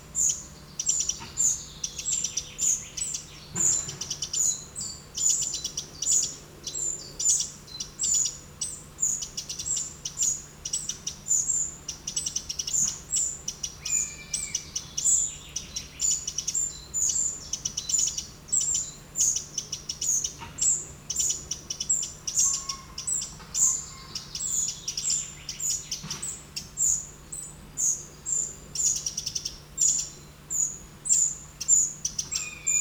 Genappe, Belgique - Spotted Flycatcher
In the Brabant-Wallon rural landscape, the irritating shouts of two Spotted Flycatchers, discussing between them. At the beginning, there's a few sound of agricultural works. After, the birds you can hear are [french name and english name] :
Gobemouche gris (Spotted Flycatcher) - tsii tsii tsii.
Troglodyte mignon (Eurasian Wren) - tac tac tac tac
Pic vert (European Green Woodpecker)
Buse variable (Common Buzzard) - yerk, yeerk.
It's great to listen the Common Buzzard, as it's not so easy to record it, it's a very moving bird of prey.
Ottignies-Louvain-la-Neuve, Belgium, 16 July 2017